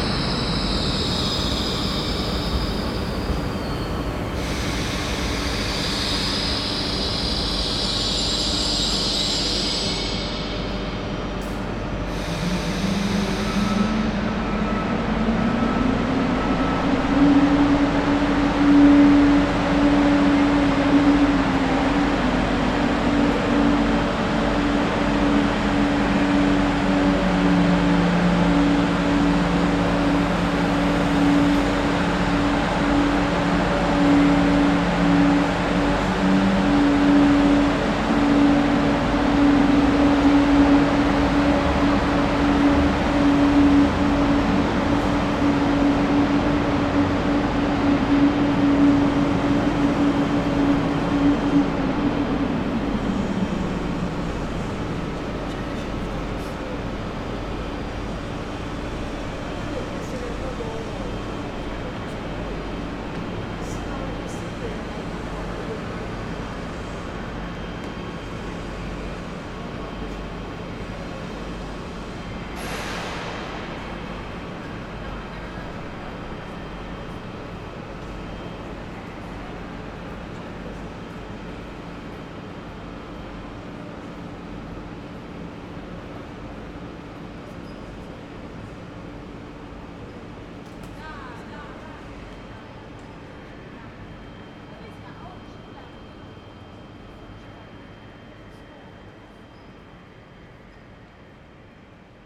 Pont en U, Bordeaux, France - BDX Gare 01
train station
Captation : ZOOMH6
10 August, 15:45